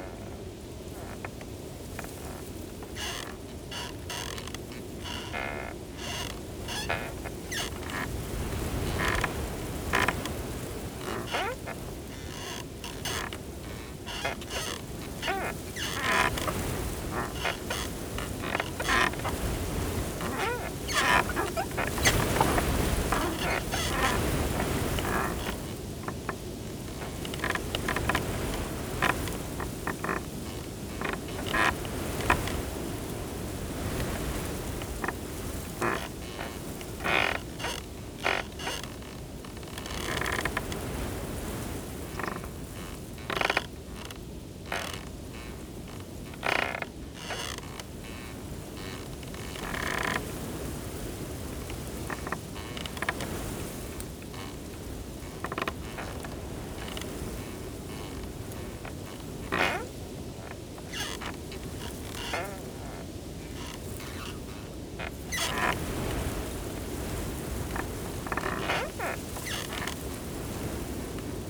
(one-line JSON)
{"title": "Wooden gate creaks, strong winds, Westwood Marsh, Halesworth, UK - Creaking wooden gate in strong wind, beautiful sunset", "date": "2022-01-29 16:11:00", "description": "Westwood marsh is a very special and atmospheric place with unique wildlife. It is one of the largest reedbeds in the UK surrounded by woodland and heath beside the North Sea and now a Suffolk Wildlife Trust/RSPB nature reserve. I have been coming here for more than 60 years and am always amazed at how unchanged it seems in all that time. Today is a beautiful winter's day with intense bright sun and blue sky. The strong gusty wind hisses through the reeds and rocks trees and people. The old, lichen covered, wooden gates creak and groan. It feels timeless as the evening sun sets below the distant tree line.", "latitude": "52.30", "longitude": "1.64", "altitude": "1", "timezone": "Europe/London"}